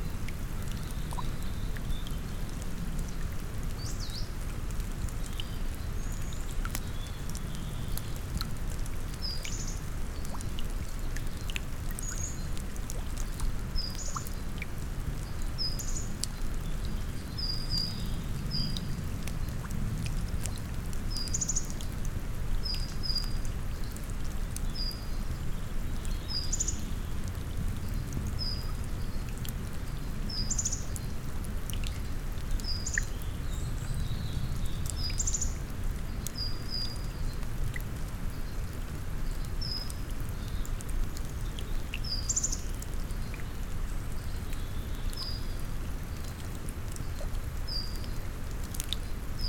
Picos de Urbion, Soria, Spain - Picos de Urbion - paisagem sonora
Uma paisagem sonora de Picos de Urbion, junto ao nascimento do rio Douro. Mapa Sonoro do rio Douro. A soundscape from Picos de Urbion, next to the source of the Douro river. Douro river Sound Map.
May 2013